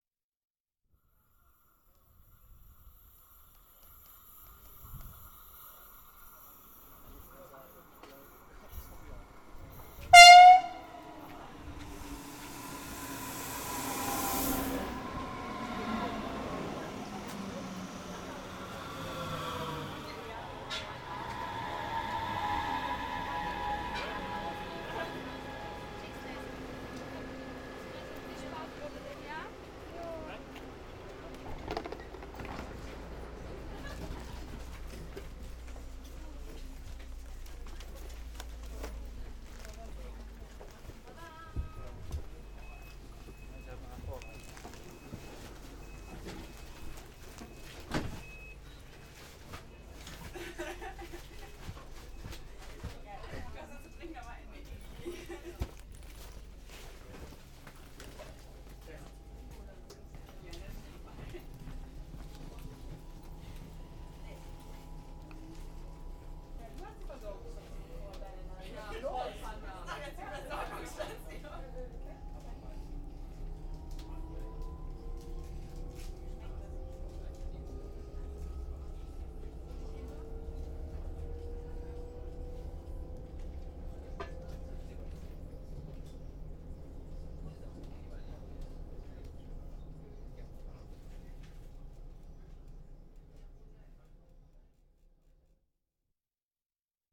Bad Vilbel, Germany - Urban Train in the Frankfurt area
Frankfurt, Bad Vilbel Sud
Train arrival outside sound, entering the train and departing within the train.
Recorded with Zoom H6, Zoom Shotgun SGH-6 with wind muffler.